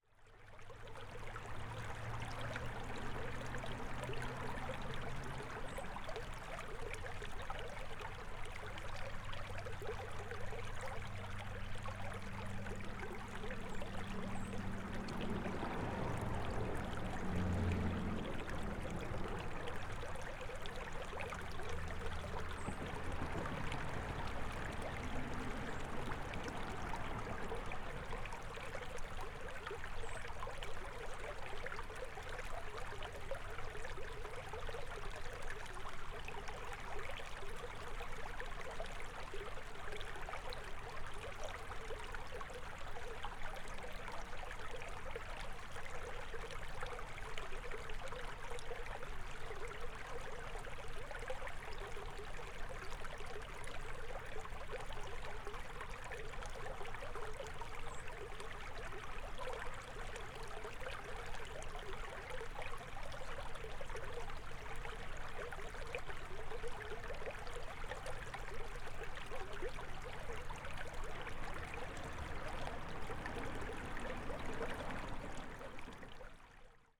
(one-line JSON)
{"title": "Grand Glaize Creek, Valley Park, Missouri, USA - Grand Glaize Creek", "date": "2022-01-09 14:54:00", "description": "Recording of Grand Glaize Creek and autos crossing bridge.", "latitude": "38.55", "longitude": "-90.46", "altitude": "128", "timezone": "America/Chicago"}